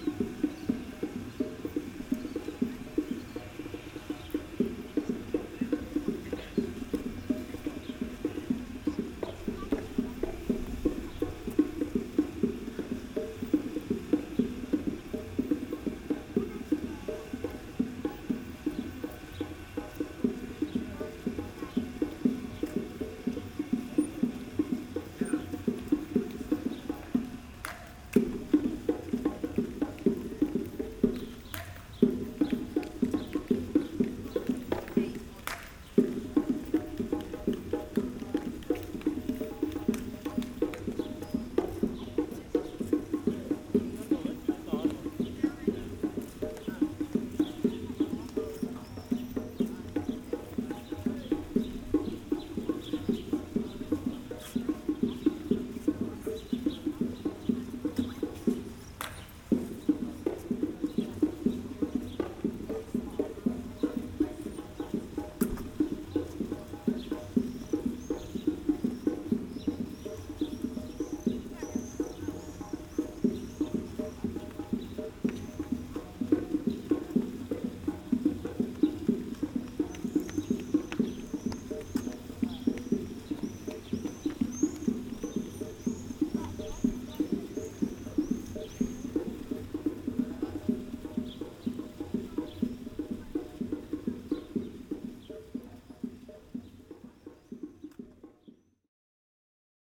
Jūrmala, Latvia, street musician
some street musician with percussion
16 July 2021, 14:40